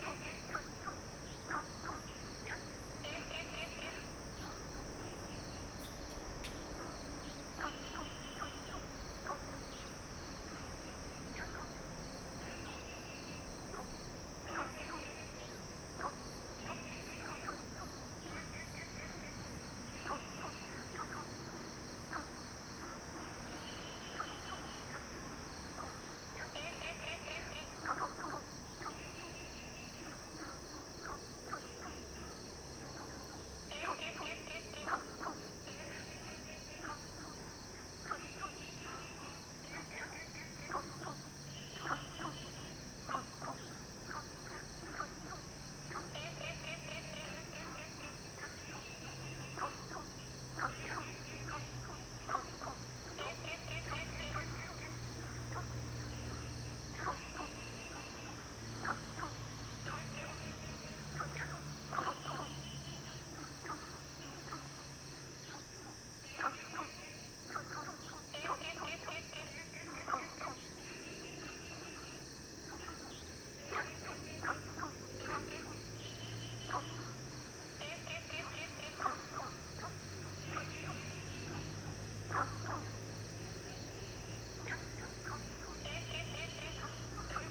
TaoMi River, Puli Township - Frogs sound
Dogs barking, Frogs chirping
Zoom H2n MS+XY
Puli Township, 桃米巷29-6號, 29 April 2015, ~22:00